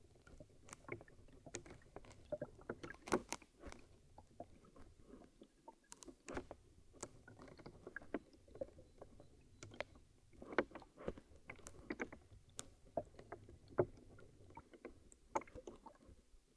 Lithuania, Utena, trash in river
contact microphone placed between two plastic bottles in half frozen river
25 February 2013